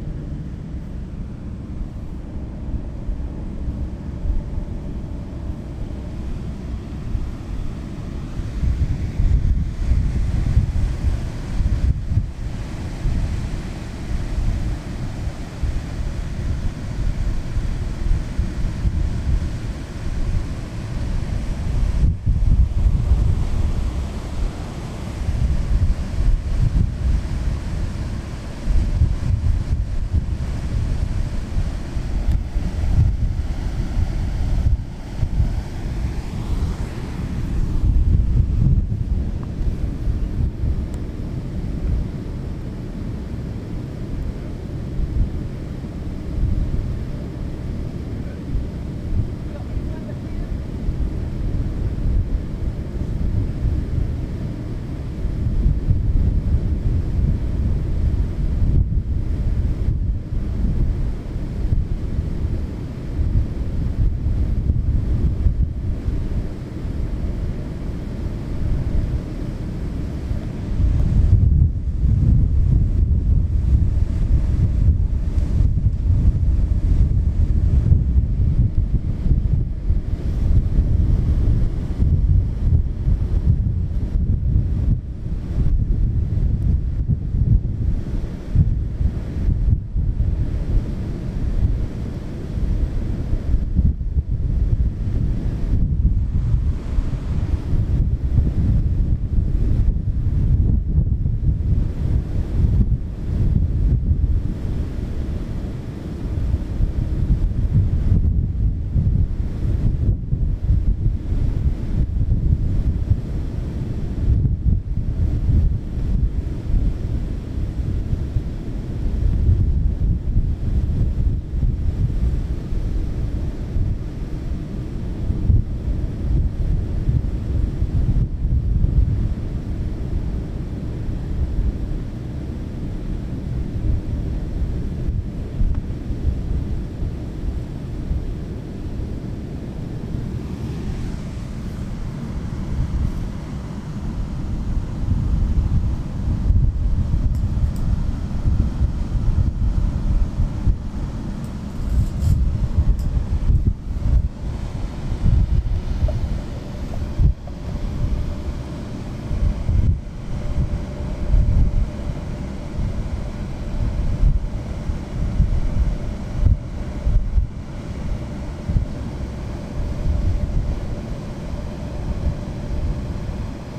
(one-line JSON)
{"title": "Dürnstein, Österreich - Leaving the Quay", "date": "2013-05-03 10:40:00", "description": "Dürnstein, the ship's about to leave the quay & resume its course on the Danube", "latitude": "48.40", "longitude": "15.52", "altitude": "194", "timezone": "Europe/Vienna"}